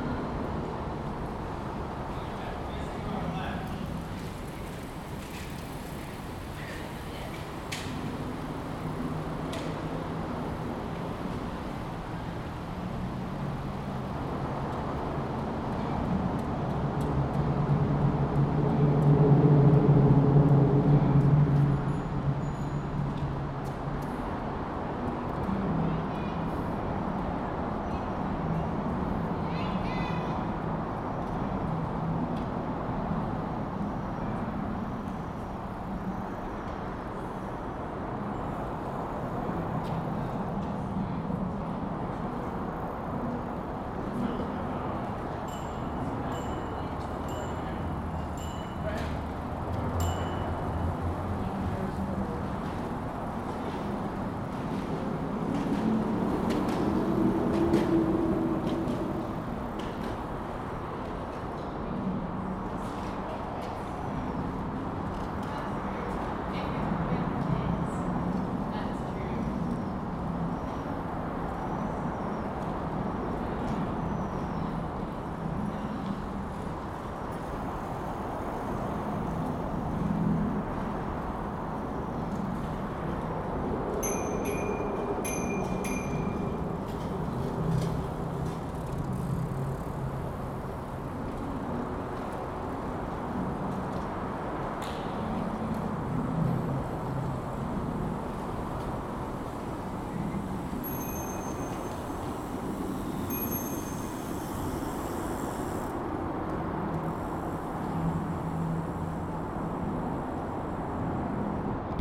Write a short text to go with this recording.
Recorded underneath the Gardiner Expressway bridge over the Humber River, right next to a bike lane underpass. The space underneath resonates with the traffic passing overhead, large groups of cyclists are passing by, and a few small boats towards the lake, Recorded on a zoom H2N.